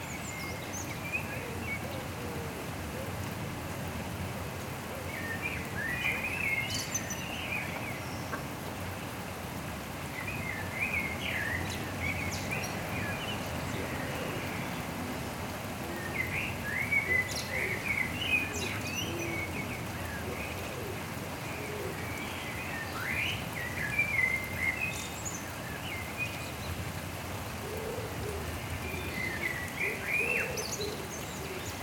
This is the sound of the wood pigeons and the blackbirds singing their hearts out in the rain. Not sure why, but Blackbirds in particular seem to really love the rain.